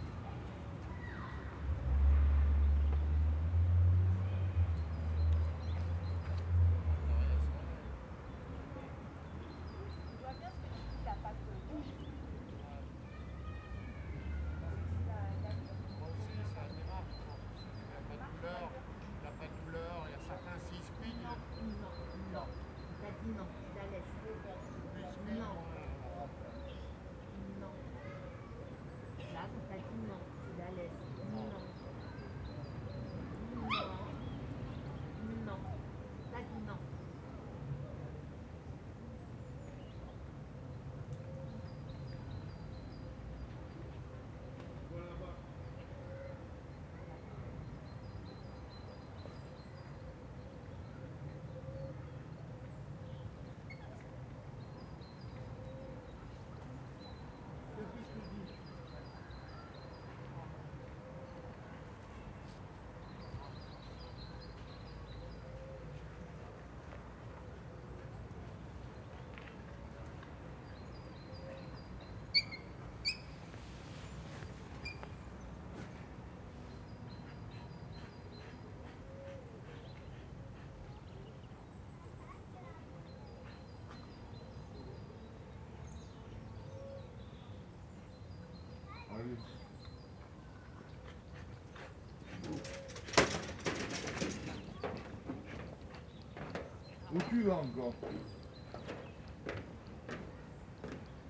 Parc des Arènes de Cimiez, Nice, France - Dog walkers and wood pigeons
Dog walkers talking to their dogs, wood pigeons talking to each other, children kicking footballs in the distance.
Recorded on Zoom H4n internal mics
14 March, 10:18